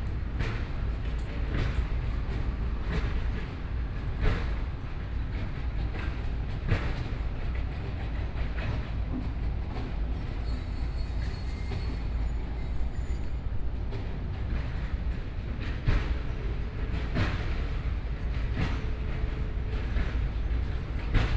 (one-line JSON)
{"title": "Taoyuan, Taiwan - Construction noise", "date": "2013-09-11 11:37:00", "description": "Construction noise, Zoom H4n+ Soundman OKM II", "latitude": "24.99", "longitude": "121.31", "altitude": "99", "timezone": "Asia/Taipei"}